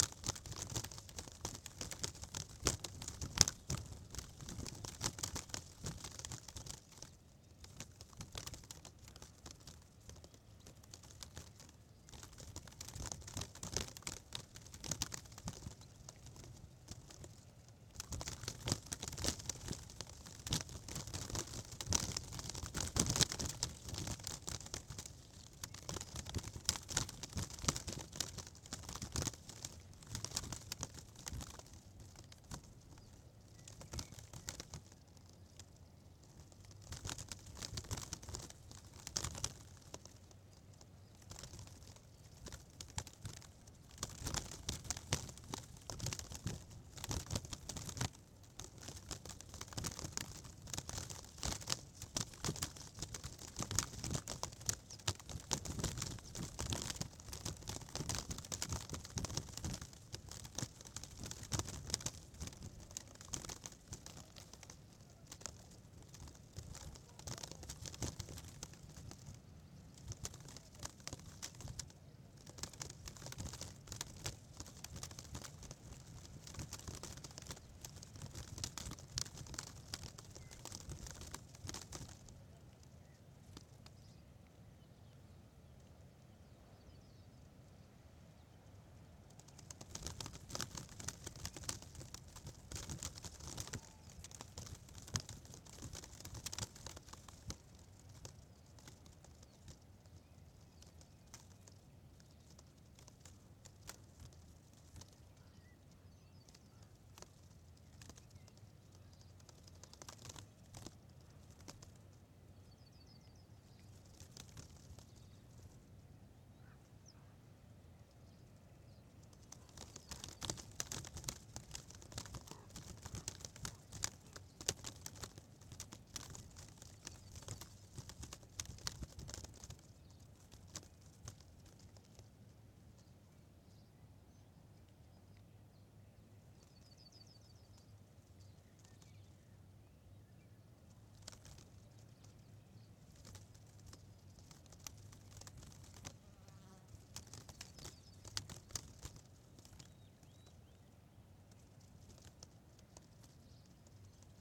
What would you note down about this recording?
some plastic protective tape playing in the wind